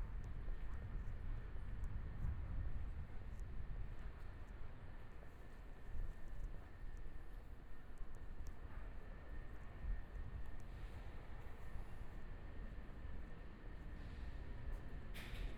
台北市中山區圓山里 - Walking across the different streets
Walking across the different streets, Environmental sounds, Traffic Sound, Motorcycle Sound, Pedestrian, Clammy cloudy, Binaural recordings, Zoom H4n+ Soundman OKM II